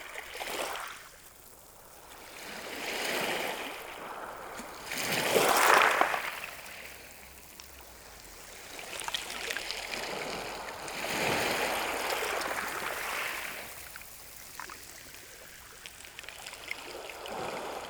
Sound of the sea, with waves lapping on the pebbles, at the quiet Criel beach during the low tide.
Criel-sur-Mer, France, November 2017